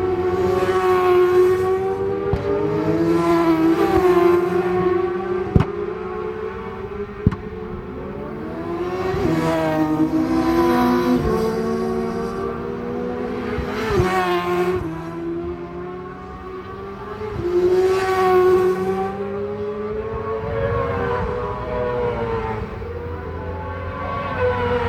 Leicester, UK - british superbikes 2002 ... supersport 600s ...
british superbikes 2002 ... supersports 600s practice ... mallory park ... one point stereo mic to minidisk ... date correct ... time not ...